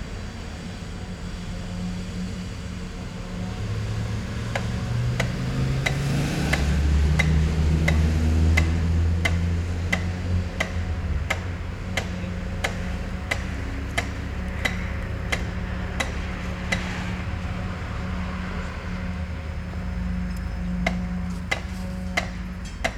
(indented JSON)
{
  "title": "Moscow, Izmailovsky Park - Building a pedestrian bridge",
  "date": "2011-07-10 21:28:00",
  "description": "Building, People, Street traffic",
  "latitude": "55.77",
  "longitude": "37.75",
  "timezone": "Europe/Moscow"
}